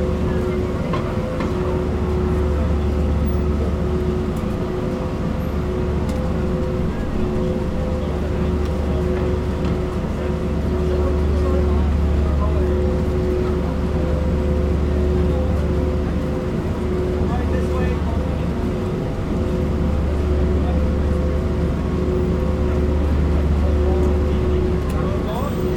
West 45th Street, W 46th St, New York, NY, United States - The Hum, Max Neuhaus’ Times Square Sound Installation
Max Neuhaus’ Times Square sound installation.
Zoom h6
NYC, New York, USA, 28 August 2019